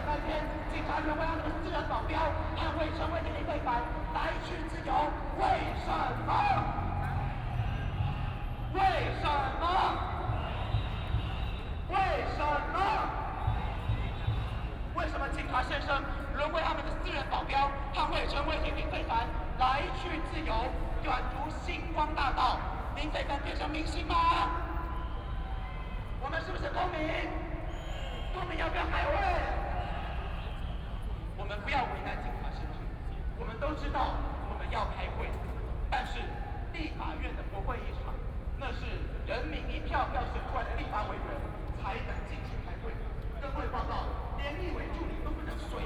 Underworld gang leaders led a group of people, In a rude language against the people involved in the student movement of students